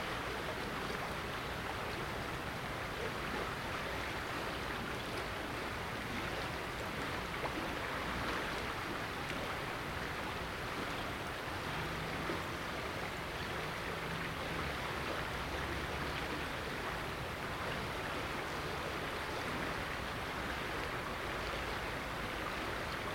Drachenburg, Bad Berka, Deutschland - River tones, forms, and gestures 4- 200421.PM22-23
A binaural project.
Headphones recommended for best listening experience.
A personally "defined" 400 Meter space of the Ilm river revealing its diverse tones, forms and gestures. The night peripheral ambience is relatively calm so there is less masking of the space.
Recording technology: Soundman OKM, Zoom F4.